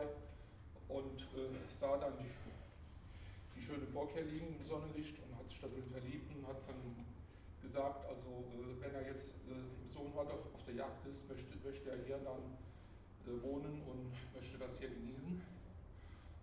niederheimbach: burg sooneck - sooneck castle tour 1
guided tour through sooneck castle(1), atrium, guide (out of breath) begins his explanations of the castle's history
the city, the country & me: october 17, 2010